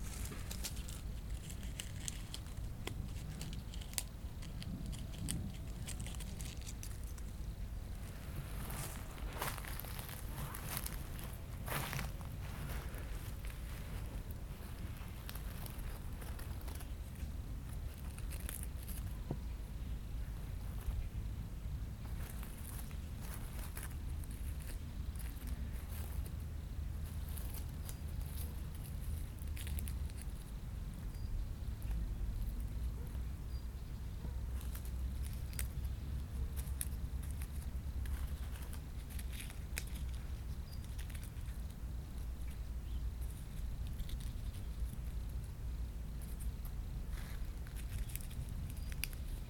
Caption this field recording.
Collecte de graines accroupi sous le savonnier du Jardin Vagabond, quelques insectes dans la haie, oiseaux discrets de passage, une travailleuse du jardin circule avec sa brouette, la conversation s'engage. bouscarle au loin. Beaucoup de moustiques je m'en tire avec une dizaine de piqures et quelques morts par claque!